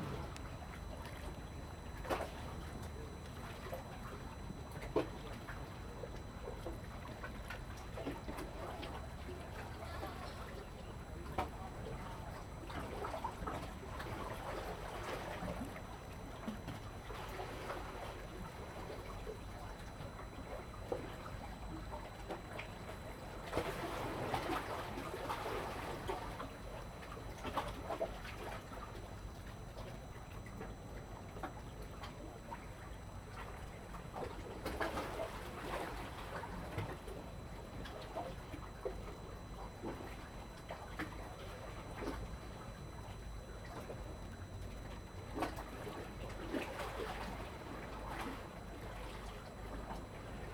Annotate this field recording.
In the fishing port, Slip block, Waves, Zoom H2n MS+XY